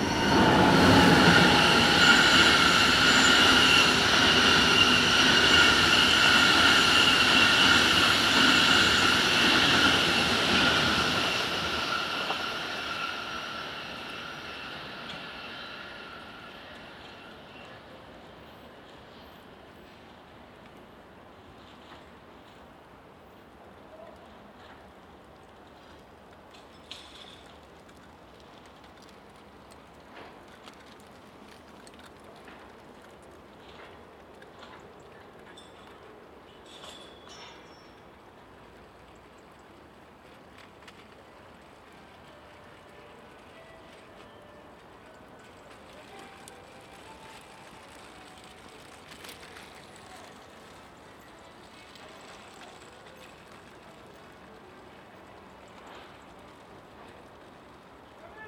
Chatelaine over the bridge, Chemin des Sports, Genève, Suisse - Train in Châtelaine
I am in the middle of the bridge. a train passes under my feet. I hear the construction workers. It is near noon and cars are driving across the bridge.
Rec with Zoom H2n an rework.